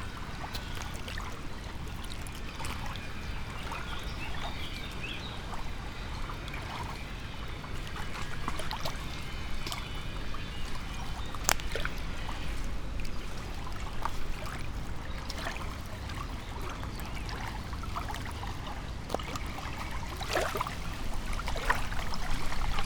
Mariborski otok, river Drava, tiny sand bay under old trees - waves
bright green lights, wave writings change rapidly as winds stirs water flow, it is gone with another before you notice
Kamnica, Slovenia, 26 April